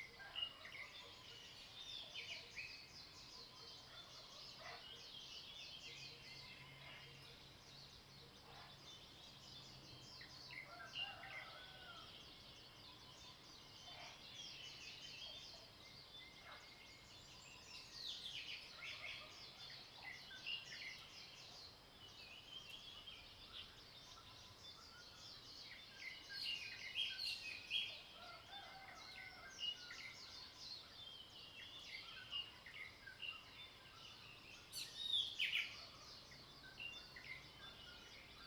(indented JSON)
{
  "title": "Green House Hostel, 桃米生態村 - Morning in the mountains",
  "date": "2015-04-29 05:25:00",
  "description": "Bird calls, Early morning, Chicken sounds, Frogs sound\nZoom H2n MS+XY",
  "latitude": "23.94",
  "longitude": "120.92",
  "altitude": "495",
  "timezone": "Asia/Taipei"
}